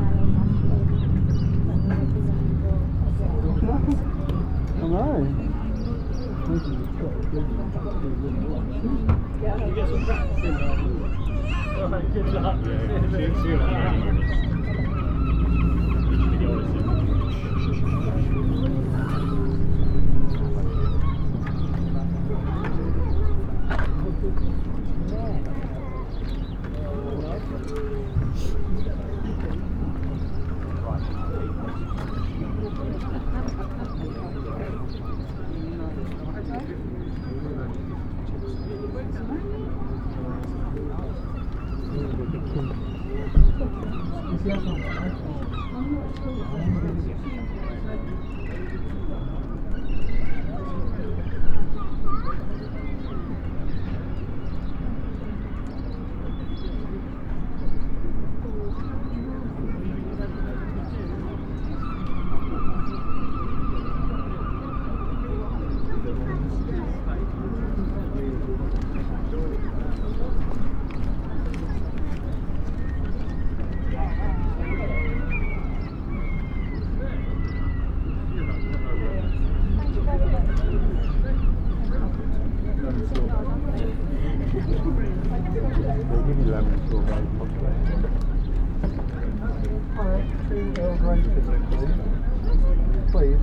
Queue For Ice Cream, Aldeburgh, Suffolk, UK - Queue
On the sea front a queue is waiting for ice cream and coffee from a small shop. The service is slow and the people wait with patience. Passers-by talk and children play. Is this a very "English" scene ? I think so.
I am experimenting again with laying the mics on the ground to make use of a "boundary effect" I have noticed before.
Recorded with a MixPre 6 II and 2 x Sennheiser MKH 8020s.
England, United Kingdom